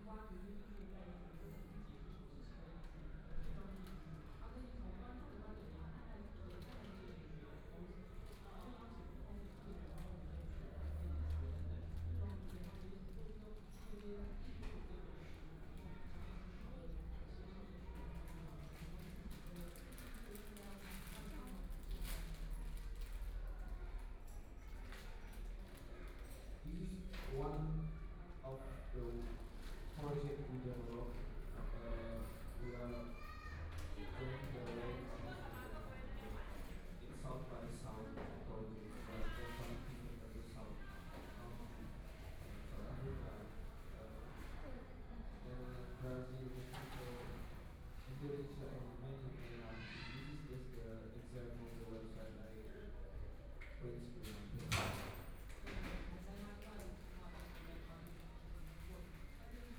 Sound of the Art Forum's activities, Sound indoor restaurant, Binaural recordings, Zoom H4n+ Soundman OKM II